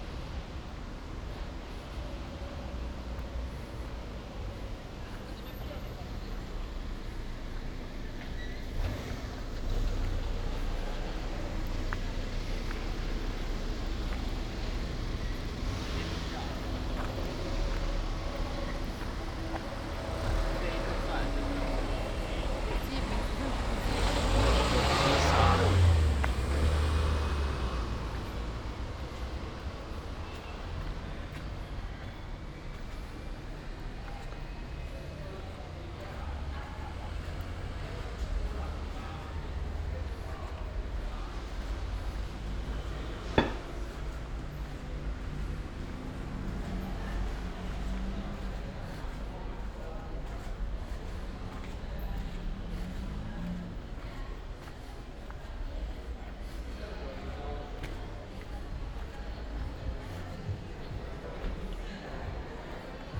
Ascolto il tuo cuore, città. I listen to your heart, city. Several chapters **SCROLL DOWN FOR ALL RECORDINGS ** - Round Midnight Ferragosto walk back home in the time of COVID19: soundwalk.
"Round Midnight Ferragosto walk back home in the time of COVID19": soundwalk.
Chapter CLXXX of Ascolto il tuo cuore, città. I listen to your heart, city
Friday, August 14th, 2021. More than one year and five months after emergency disposition due to the epidemic of COVID19.
Start at 11:46 p.m. end at 00:45 a.m. duration of recording 48’55”
As binaural recording is suggested headphones listening.
The entire path is associated with a synchronized GPS track recorded in the (kmz, kml, gpx) files downloadable here:
This path is the same as the second path of one year before, August 14th, 2020: